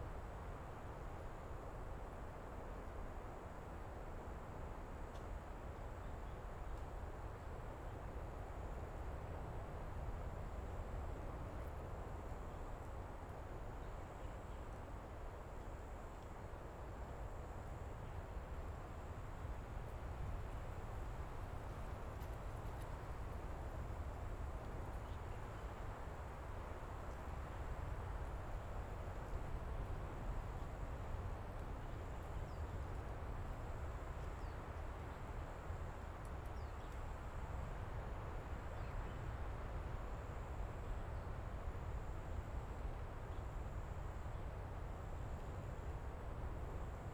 Taitung Forest Park, Taiwan - In the woods
Casuarinaceae, The sound of the wind moving the leaves, Sound of the waves, Zoom H6 M/S